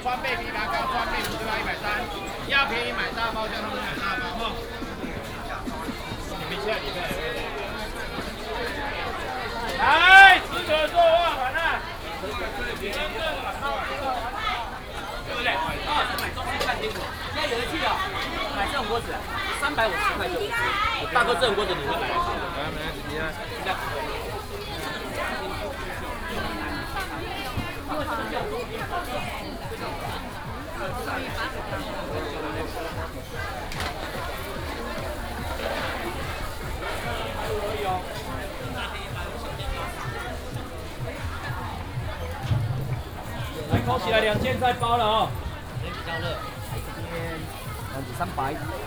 Ren’ai Rd., Zhudong Township, Hsinchu County - in the traditional market
Walking in the traditional market
Hsinchu County, Taiwan, 17 January, 11:14